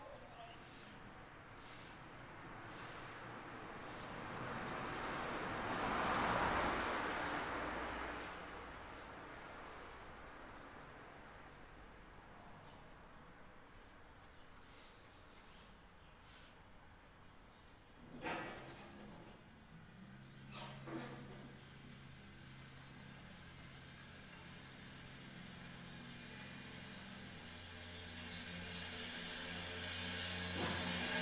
Herxheim bei Landau (Pfalz), Deutschland - Morgenstimmung in Hayna
Eine Straße wird gefegt. Ein Getränkelieferant entlädt seinen Wagen. Ein LKW fährt vorbei- Vogelgezwitscher.
Germany, 10 May 2019